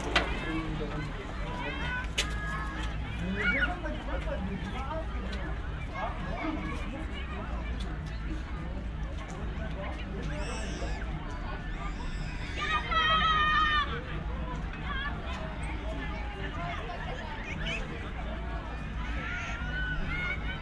Tempelhofer Feld, Berlin, Deutschland - sounds from the field, heard in an entrance
at the buildings near Oderstraße, sounds from passers-by an the distant crowded field, heard in a small entrance
(SD702, Audio Technica BP4025)